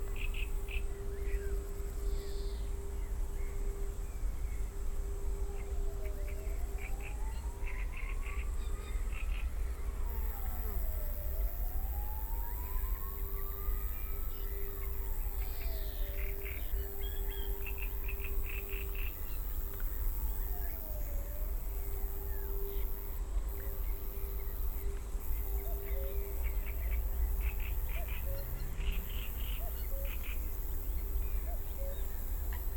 Văcărești swamp, bucharest, romania - walking
crazy.
2 x dpa 6060 mics (fixed on ears).